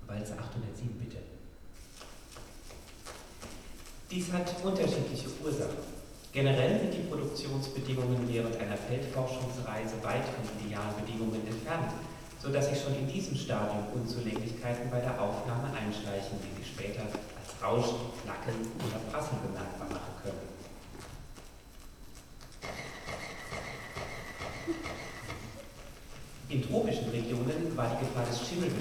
berlin hau3 - phonograph
theater play about old ethnographic phonograph recordings
(amazonas - eine phonographische anstrengung)
Berlin, Germany, 11 December 2009, 20:30